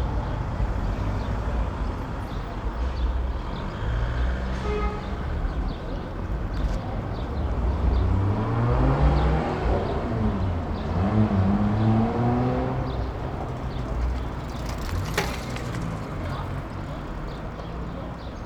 Berlin: Vermessungspunkt Friedelstraße / Maybachufer - Klangvermessung Kreuzkölln ::: 26.05.2011 ::: 19:03
Berlin, Germany, 26 May 2011